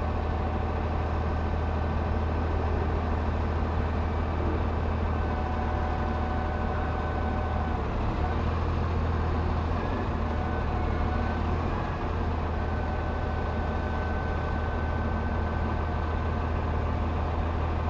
Ume. Holmsund Ferry Terminal. Ferry docking
Holmsund - Vaasa ferry docking and unloading.